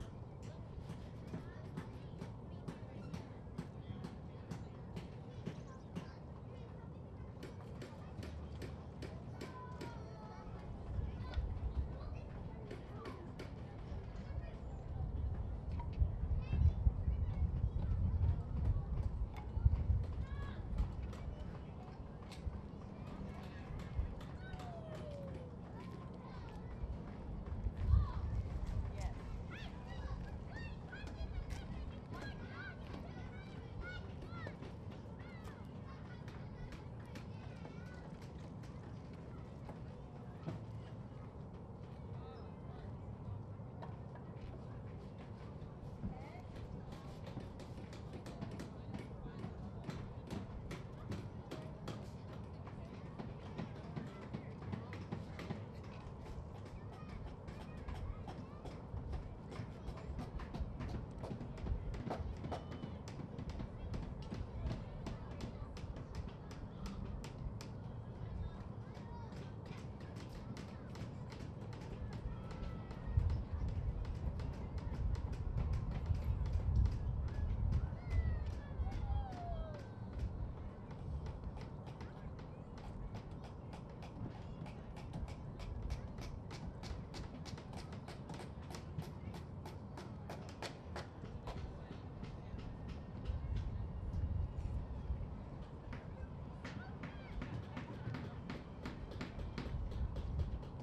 Berkeley Marina - adventure park for kids
Berkeley, CA, USA, 16 November 2010, ~03:00